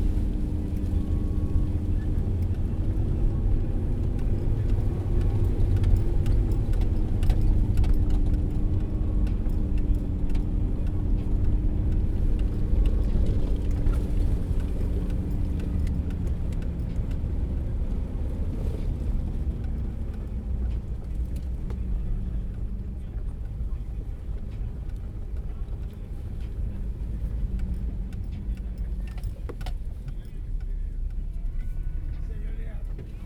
Unnamed Road, Vința, Romania - driving 4wheel jeep up
in the jeep going back to Rosia Montana
29 September 2017, 13:32